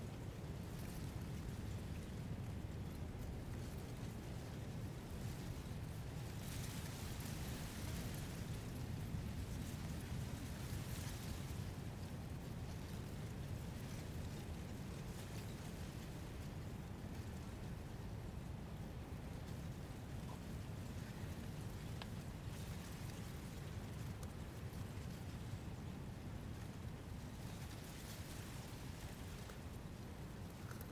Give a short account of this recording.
This is the sound of the wind passing through rushes on top of St. Ninian's Isle. I was there walking with my friends Lisa and Kait, when I espied a big clump of rushes and instantly wanted to hear what it sounded like up close. I rushed over and buried my Naiant X-X omni-directionals deep into the leaves, then sat back and listened with my own ears to the lovely textures of those plants being stirred by the wind. It's hard to record the wind directly, but I like how you can hear it indirectly here, in the shuffle and abrading of thick green leaves moving together under its force. I love how the gusts - the swell and decay of the wind - are also somehow evident here in the way it is teasing the rushes. You can also hear in the recording some small drifts of conversation - more a sound than individual words - from Kait and Lisa, who were sat further up the hill from me, waiting for me. It was a beautiful Sunday evening, and there were sheep all around us.